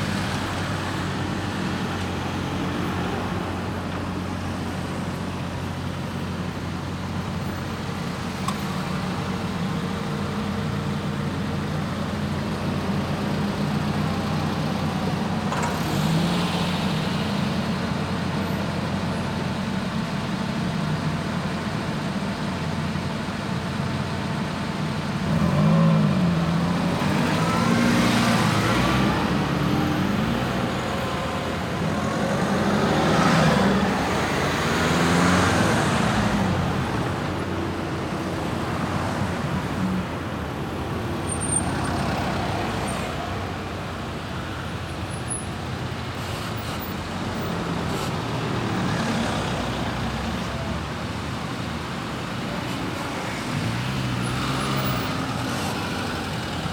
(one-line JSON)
{"title": "Prinzenallee, Soldiner Kiez, Wedding, Berlin - Prinzenallee - Traffic jam", "date": "2013-08-09 15:44:00", "description": "Prinzenallee - Stau auf der Prinzenallee.\nPrinzenallee - Traffic jam.\n[I used a Hi-MD-recorder Sony MZ-NH900 with external microphone Beyerdynamic MCE 82]", "latitude": "52.56", "longitude": "13.39", "altitude": "41", "timezone": "Europe/Berlin"}